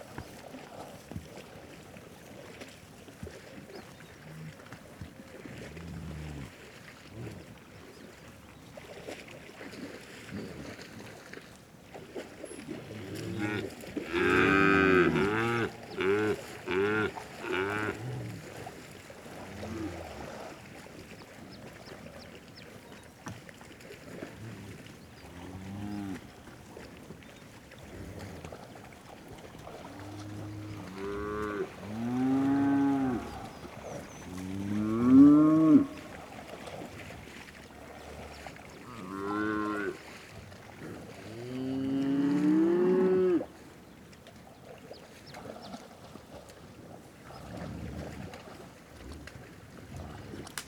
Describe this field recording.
Cattle is drinking and swimming while it’s hot in the desert of Arizona, in the area of Arivaca. Recorded by a Sound Devices MixPre6, With a ORTF Schoeps Setup CCM4 x 2 in a windscreen by Cinela, Sound Ref: AZ210816T001, Recorded on 16th of August 2021, GPS: 31.661166, -111.165792